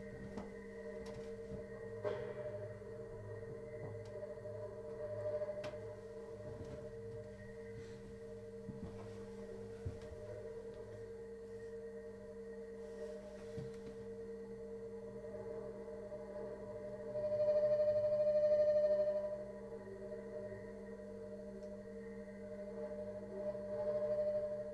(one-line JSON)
{"title": "Sound Room In Marjaniemi, Hailuoto, Finnland - line tilt installation 05", "date": "2012-05-24 12:53:00", "latitude": "65.04", "longitude": "24.56", "altitude": "8", "timezone": "Europe/Helsinki"}